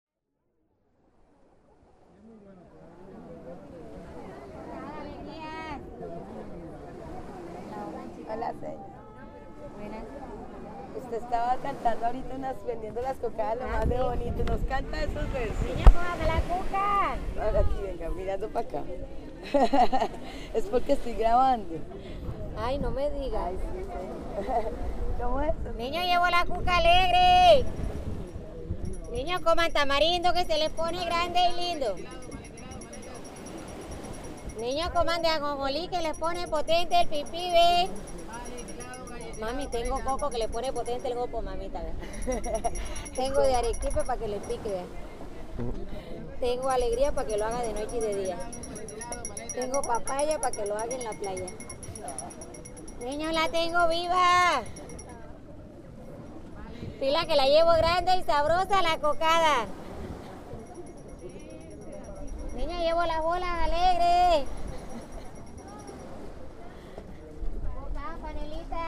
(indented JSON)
{
  "title": "Taganga, Playa Grande. Carmen vendedora de cocadas",
  "date": "2011-03-27 15:14:00",
  "description": "Carmen vende cocadas dulces hechos con coco, papaya, tamarindo y panela... así los promociona en la playa grande",
  "latitude": "11.27",
  "longitude": "-74.20",
  "altitude": "5",
  "timezone": "America/Bogota"
}